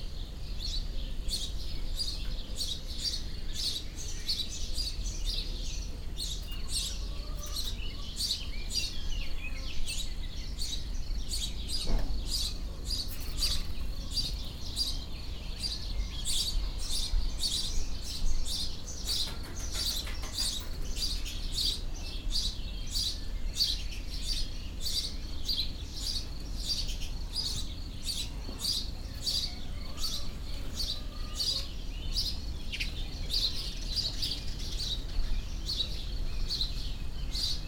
Vions, France - An hour in Vions village with sparrows, during a long summer evening
We are in a small village of Savoy, France. A bucolic garden focus everything beautiful and pleasant you can think about evoking a warm summer evening. After a tiring very hot day, crushed by weariness, come with us, rest on the terrace under the linden tree. Gradually a delicate freshness returns. You will be cradled by the sparrows, and progressively arrives the summer months nightlife : frogs and locusts.
Au sein de ce petit village, un écart bucolique comporte tout ce qu'il peut exister de beau et d'agréable en une belle soirée chaude d'été. Après une journée harassante de chaleur et écrasé par la fatigue, venez vous reposer sur la terrasse, sous le tilleul, avec peu à peu une sensible fraicheur qui revient. Vous serez bercés par les piaillements des moineaux, qui graduellement s'éteignent en vue de laisser la place à la vie nocturne des mois estivaux : les grenouilles et les criquets.
11 June 2017, 20:30